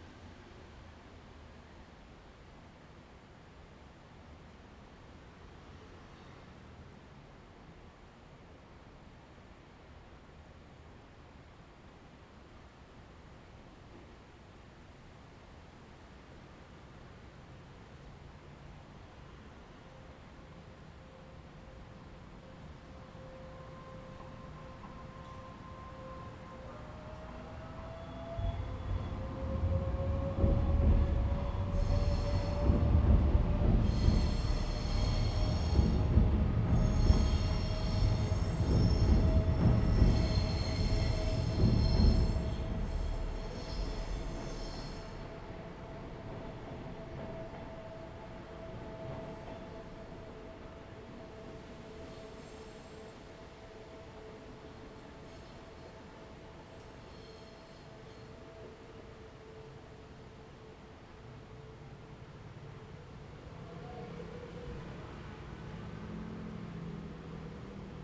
Yuli Township, Hualien County - under the railway tracks
Traffic Sound, Train traveling through the sound, under the railway tracks
Zoom H2n MS+XY
Yuli Township, Hualien County, Taiwan, 9 October 2014